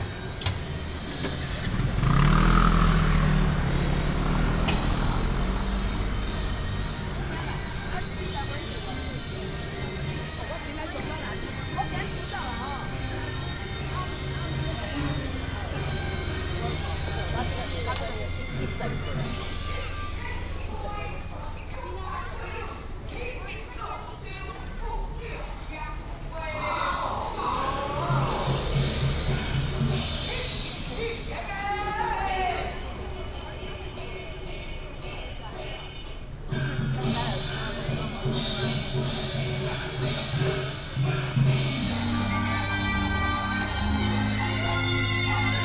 Walking in the area of the temple, Taiwanese traditional opera, Traffic sound, sound of birds